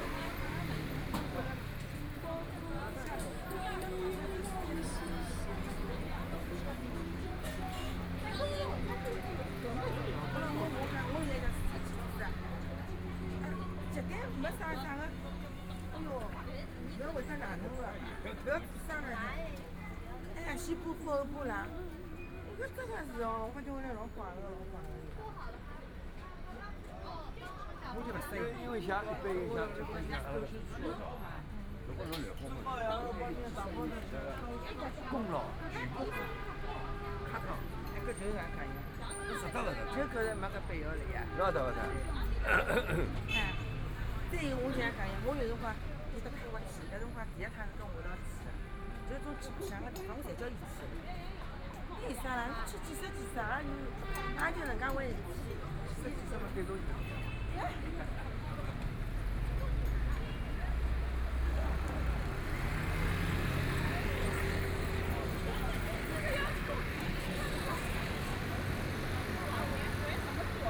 Sichuan Road, Shanghai - walking in the Street
walking in the Street, Binaural recordings, Zoom H6+ Soundman OKM II
Huangpu, Shanghai, China, 2 December, ~12:00